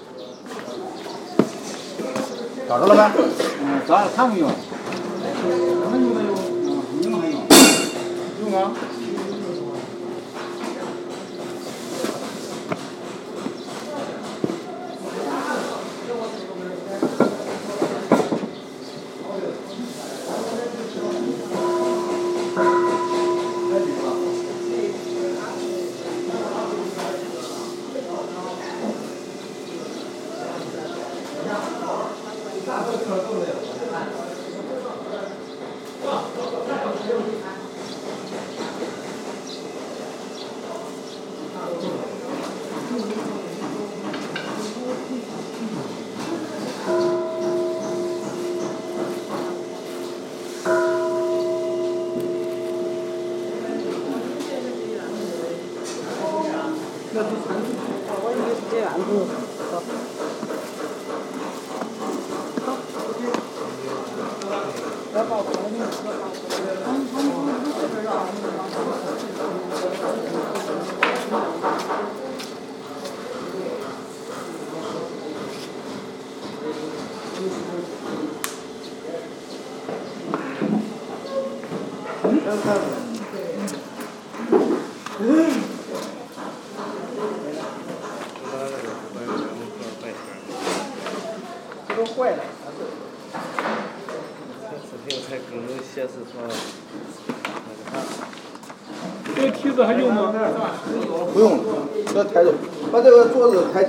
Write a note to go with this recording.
Mixture of Tibetan bowl and Work in Progress sounds in a Taoist temple. Between a sacred and profane music, something in between, between listening and not listening. recorder : pcm-10 Sony